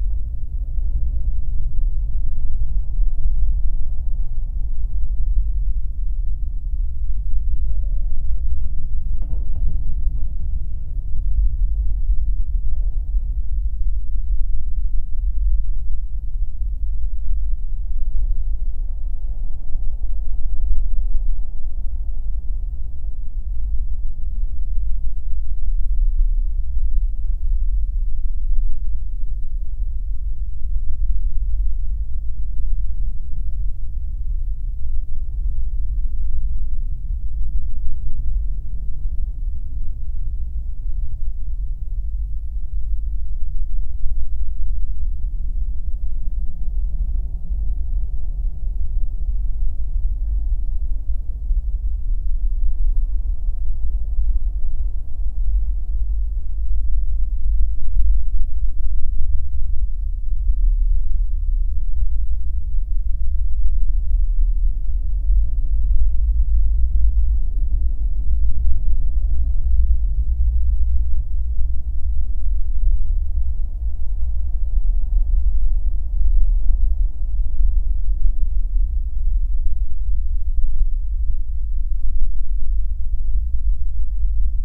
Kaunas, Lithuania, abandoned autodrom
Abandoned autodrom. This was very popular in soviet times: you could drive small electrical cars on a special place. For the recording I placed magnetic geophone on some kind of metallic mesh that at the roof. The purpose of the mesh was to give electrical phase to the small cars.
Kauno apskritis, Lietuva, 19 August 2021